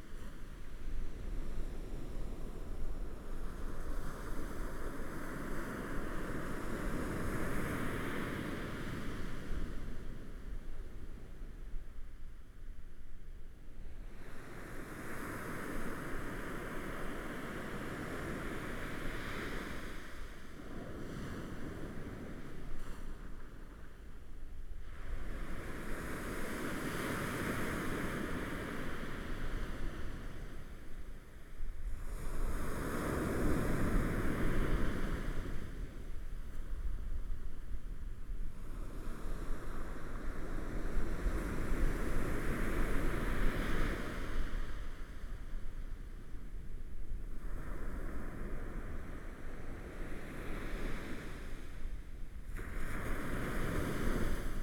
Hualien City, Taiwan - Sound of the waves
Sound of the waves, Zoom H4n+Rode NT4